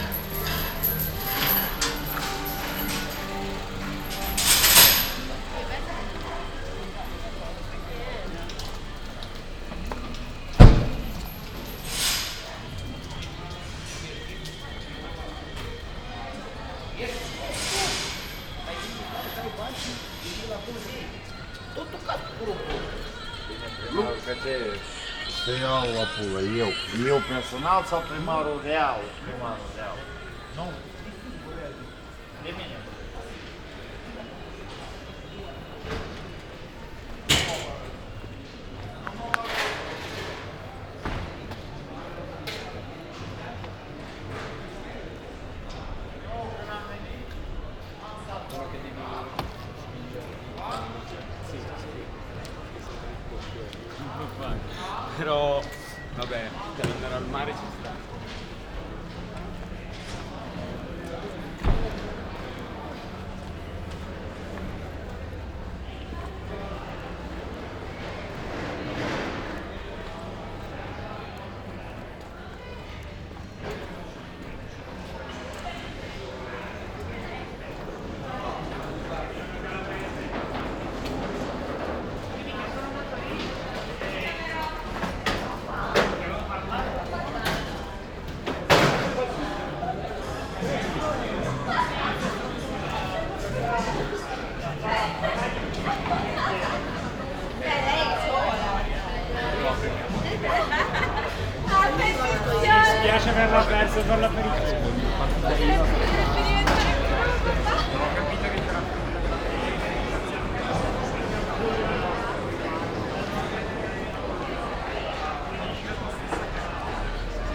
Ascolto il tuo cuore, città. I listen to your heart, city. Chapter LXXXV - Round Midnight on the road again in the time of COVID19: soundscape.
"Round Midnight on the road again in the time of COVID19": soundscape.
Chapter CLXXV of Ascolto il tuo cuore, città. I listen to your heart, city
Friday, June 10th, 2021. The third night of new disposition for curfew at midnight in the movida district of San Salvario, Turin. More than one year and two months after emergency disposition due to the epidemic of COVID19.
Start at 11:48 p.m. end at 00:18 a.m. duration of recording 30’22”
The entire path is associated with a synchronized GPS track recorded in the (kmz, kml, gpx) files downloadable here:
Piemonte, Italia